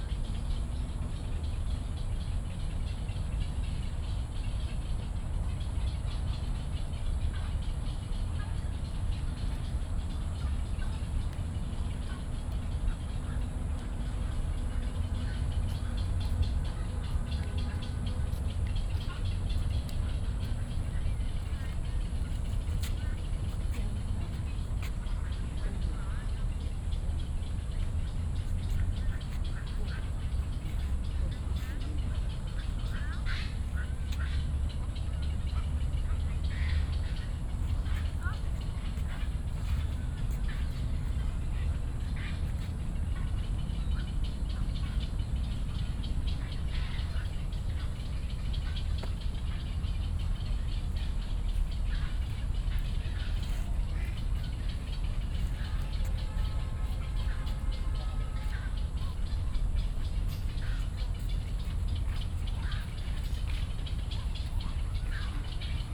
Daan Forest Park, 大安區 Taipei City - Bird calls
Bird calls, Frogs chirping, in the park